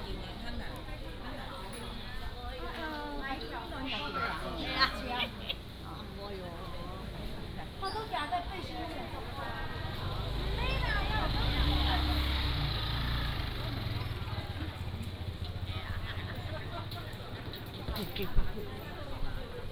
Walking in the traditional market, Market selling sound
Miaoli City, Miaoli County, Taiwan, 16 February 2017